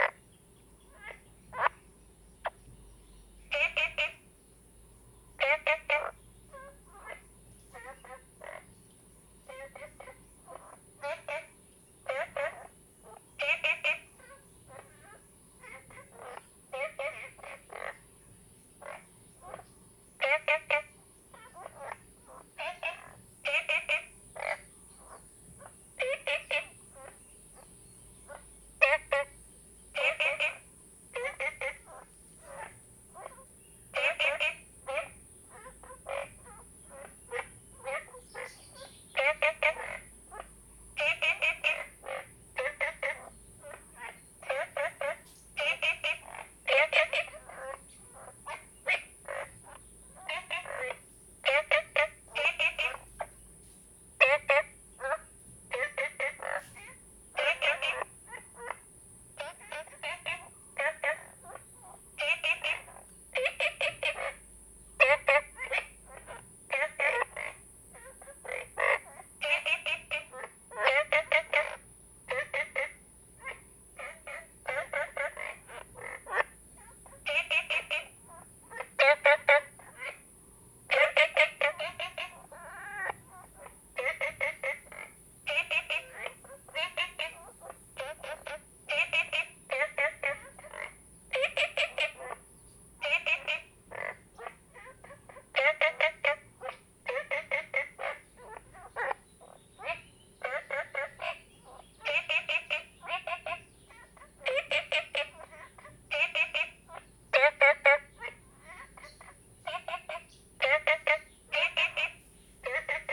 綠屋民宿, 桃米里 Taiwan - Small ecological pool
Frogs chirping, Ecological pool
Zoom H2n MS+XY
10 June 2015, 13:05